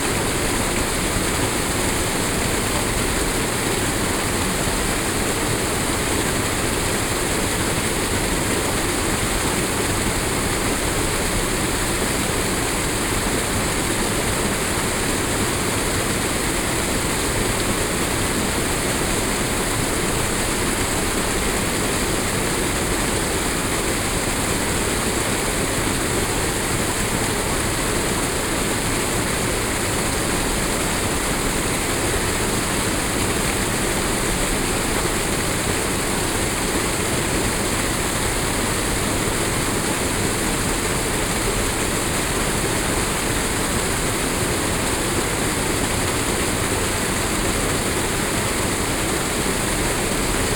France, Auvergne, WWTP, waterfall, night, insects, binaural
Avermes, France